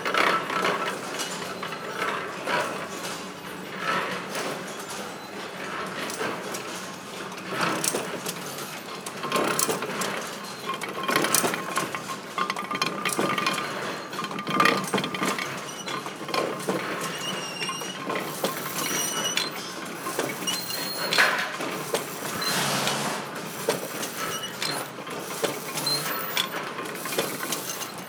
Machines stamping and cutting forms out of paper and cardboard. recorded with Sony D50, 90 degrees stereo. Thanks to Sandro.
Laak, The Netherlands, 13 March 2012, 13:45